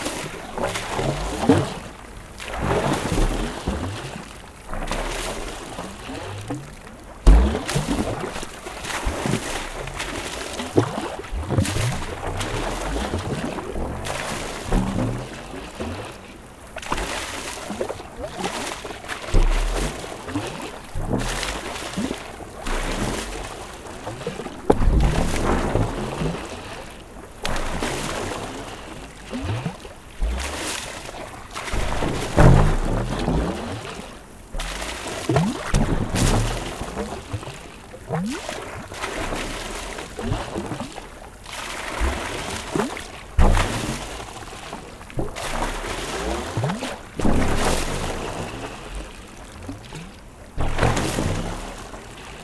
Mont-Saint-Guibert, Belgique - Mad pump
On this evening because of a storm, this place is flooded. Since monthes here, some people construct homes. As there's a lot of water in the bedrock, actually enormous holes in the ground, some big pumps are installed. When it's near to be empty in the bedrocks holes, the pipes make strange mad sounds of reflux. The pipes are recorded near the river, where water is thrown.